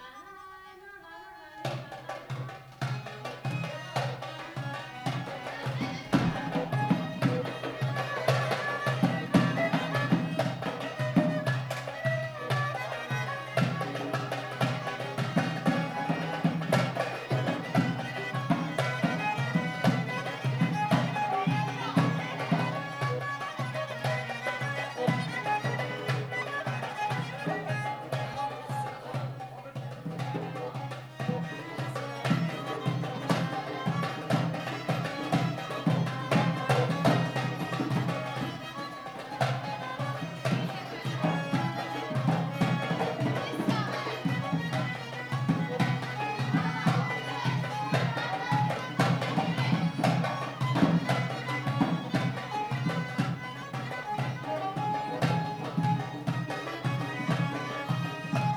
Unnamed Road, Sabirabad, Azerbeidzjan - childeren in a camp full of refugees from war with Armenia
childeren in a camp full of refugees from war with Armenia sing and dance.
Sabirabad rayonu, Azerbaijan